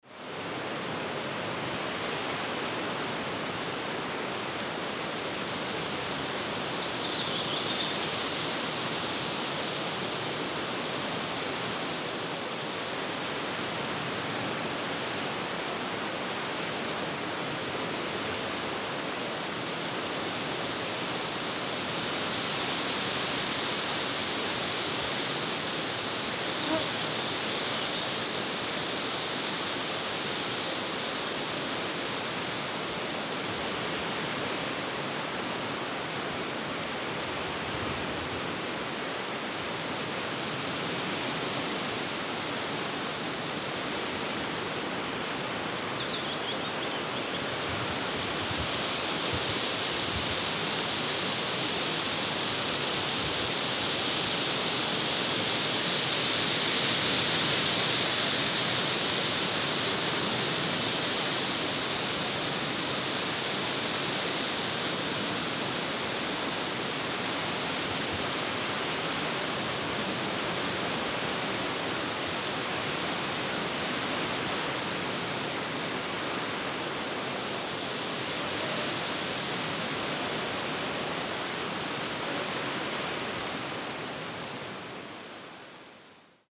In the wood. The noise of the wind and birds, Russia, The White Sea. - In the wood. The noise of the wind and birds.
In the wood. The noise of the wind and birds.
В лесу. Шум ветра, птицы.